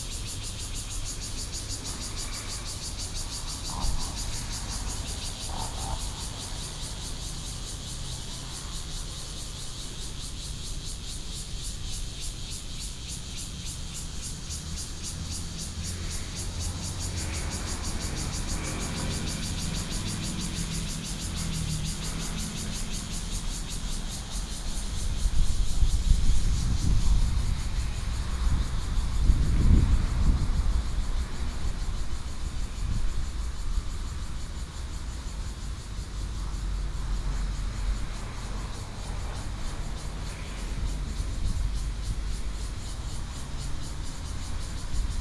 美崙海濱公園, Hualien City - In the roadside park
In the roadside park, Cicadas sound, Traffic Sound
Binaural recordings
Hualien County, Taiwan, 27 August 2014, 18:24